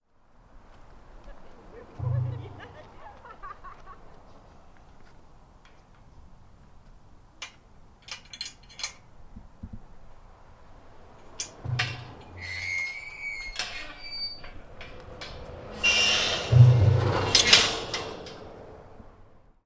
Along river walk, under road bridge, exit through gate.
United Kingdom, European Union, 18 July 2013